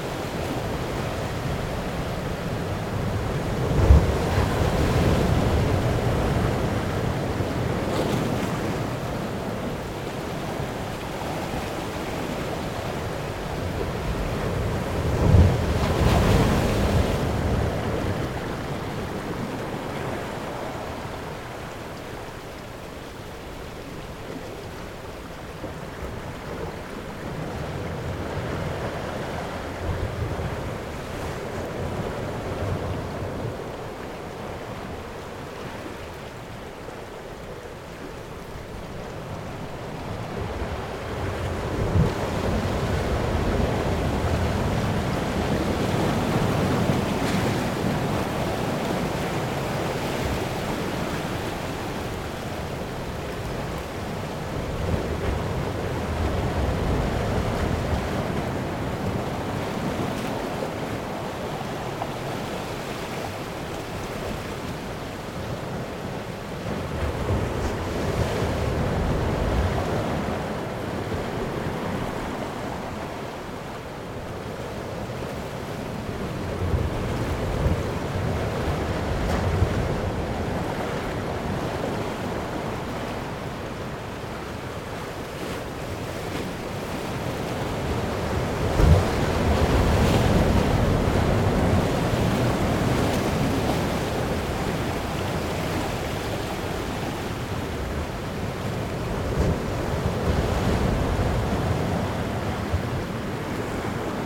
Île Renote, Trégastel, France - Waves crushing on a rock [Ile Renote ]
Marée montante. les vagues viennent s'écraser contre le flanc d'un rocher.
Rising tide. the waves crash against the side of a rock.
April 2019.